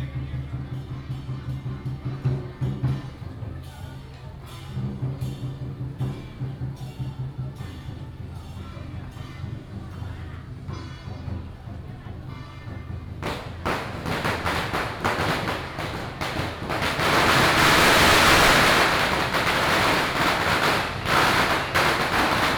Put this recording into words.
Traditional temple festivals, Firecrackers